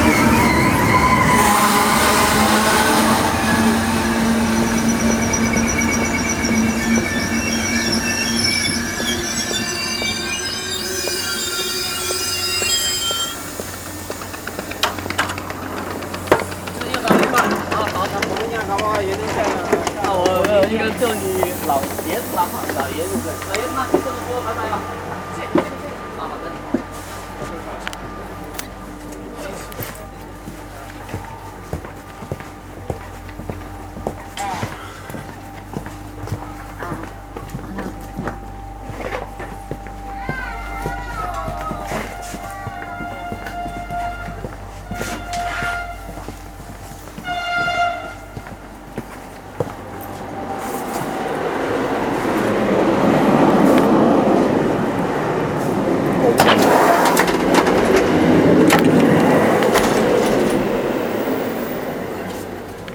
Rifredi railway station, Firenze, Italia - jumping on the train
Jumping on the train from Rifredi railway station. the station is more quiet than others, but noise from the the train brakes when stopping is really disturbing to our ears...